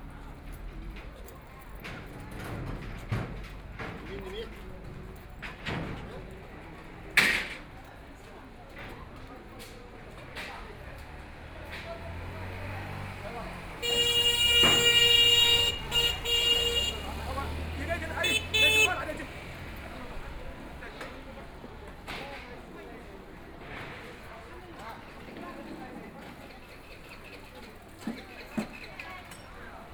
Xiamen Road, Shanghai - Walking through the old neighborhoods
Walking through the old neighborhoods, Various materials mall, Traffic Sound, Shopping street sounds, The crowd, Bicycle brake sound, Trumpet, Brakes sound, Footsteps, Bicycle Sound, Motor vehicle sound, Binaural recording, Zoom H6+ Soundman OKM II